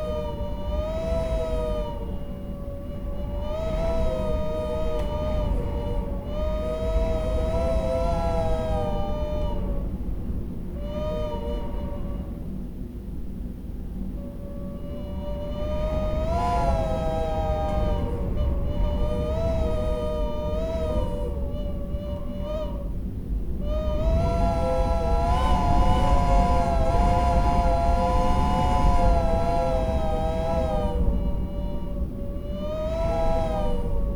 whistling window seal ... in double glazing unit ... olympus ls14 integral mics ... farmhouse tower ...
Alba / Scotland, United Kingdom, 1 February 2022